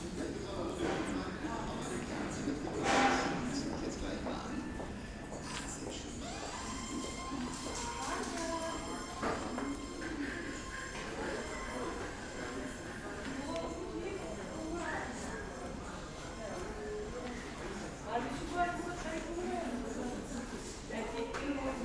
short soundwalk through diy store on christmas eve
the city, the country & me: december 24, 2011
Berlin, Germany, 24 December 2011, 13:10